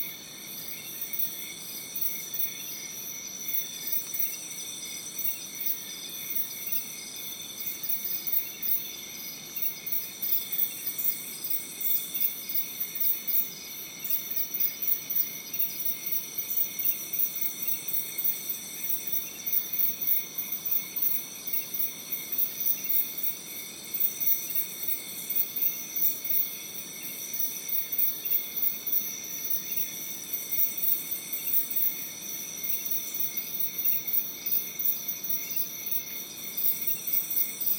Iracambi - infinte night
recorded at Iracambi, a NGO dedicated to protect and regrow the Atlantic Forest